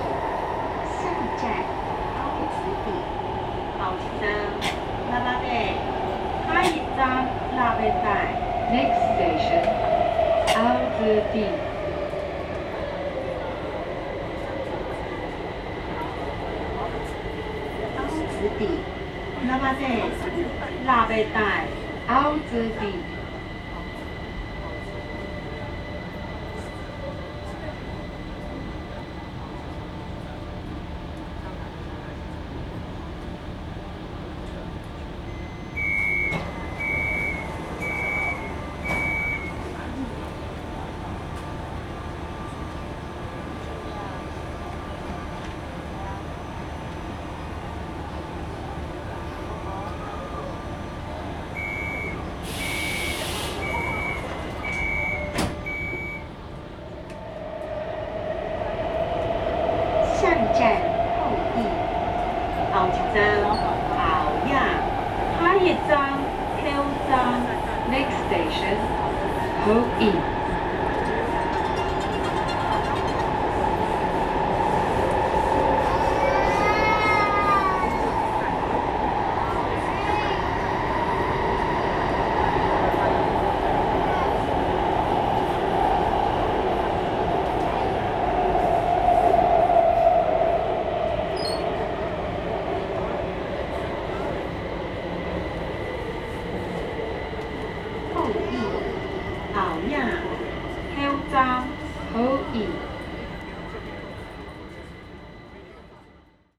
Zuoying - Broadcast Message
Kaohsiung Mass Rapid Transit, from Ecological District Station to Houyi Station, Sony ECM-MS907, Sony Hi-MD MZ-RH1
高雄市 (Kaohsiung City), 中華民國, 29 March 2012, 16:07